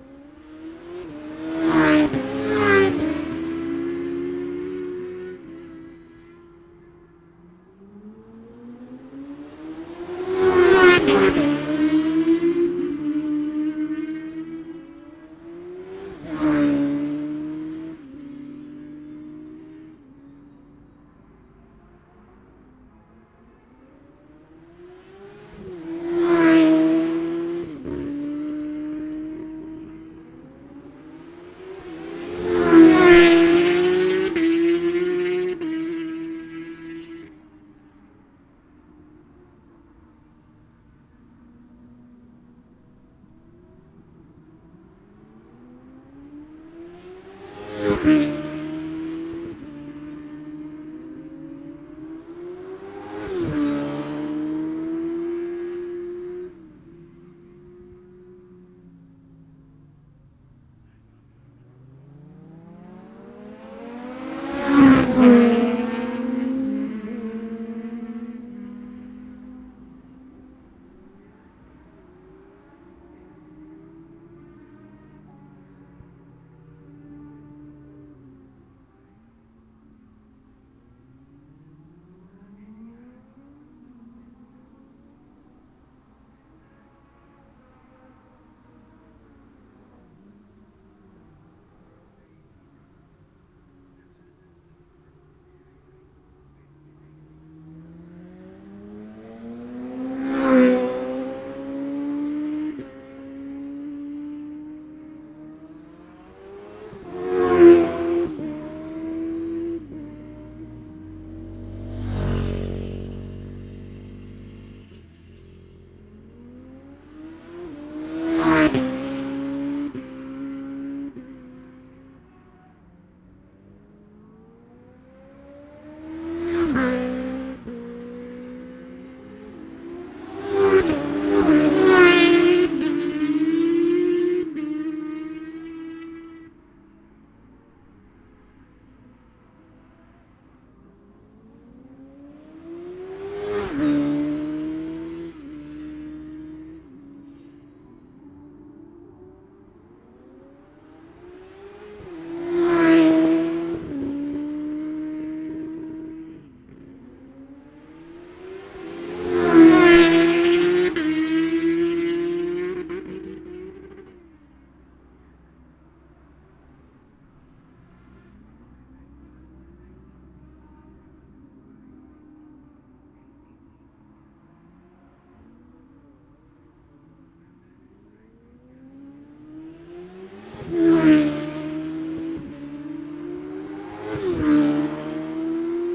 Jacksons Ln, Scarborough, UK - Gold Cup 2020 ...
Gold Cup 2020 ... sidecars and classic superbike pactices ... Memorial Out ... Olympus LS14 intgral mics ...